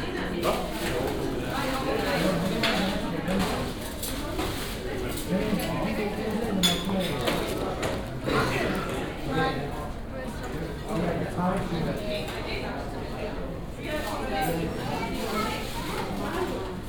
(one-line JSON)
{"title": "Hamburg, Neue Große Bergstr. - Discount bäckerei / discount bakery", "date": "2009-10-31 14:58:00", "description": "samstag, markt, discount bäckerei / saturday, market, discount bakery", "latitude": "53.55", "longitude": "9.94", "altitude": "33", "timezone": "Europe/Berlin"}